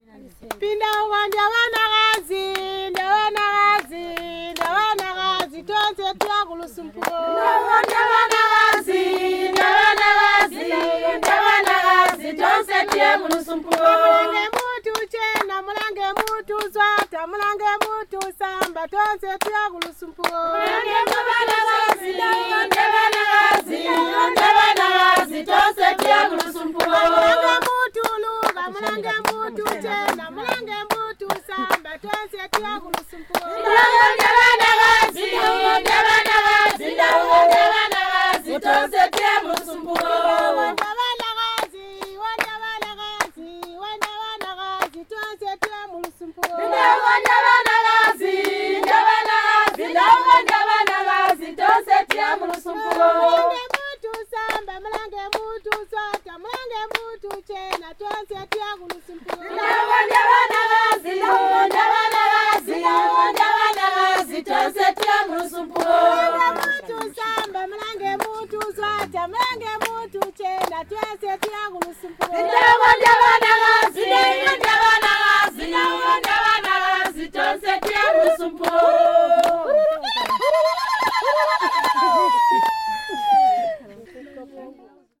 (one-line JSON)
{"title": "Manjolo, Binga, Zimbabwe - Manjolo Women's Forum....", "date": "2016-06-17 14:30:00", "description": "... every meeting begins and ends with singing and a prayer... one of the women's signature songs: the members of Zubo’s Manjolo women’s forum are encouraging each other and other women to work and stand on their own feet.\nZubo Trust is a women’s organization bringing women together for self-empowerment.", "latitude": "-17.77", "longitude": "27.41", "altitude": "638", "timezone": "GMT+1"}